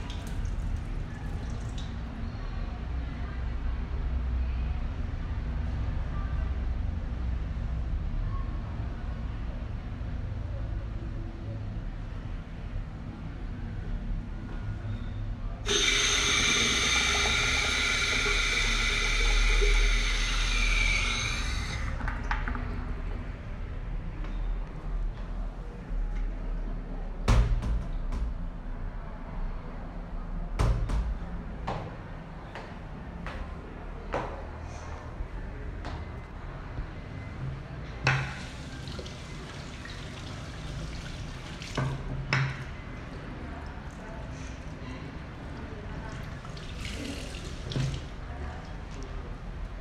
Cl., Medellín, Antioquia, Colombia - Baños Bloque 6
Descripción
Sonido tónico: líquidos
Señal sonora: Puertas cerrándose, canillas, inodoro
Micrófono dinámico (Celular)
Altura 1.20 cm
Duración 3:13
Grabado por Luis Miguel Henao y Daniel Zuluaga Pérez